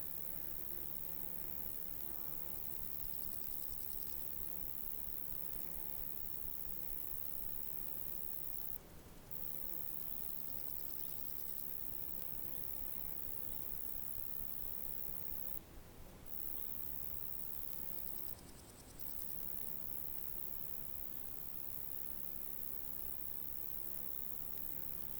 cicadas and other insects tschirping on two sides of a small forest street in the bavarian forest near the border..
Recorder: Zoom H5, no treatments or effects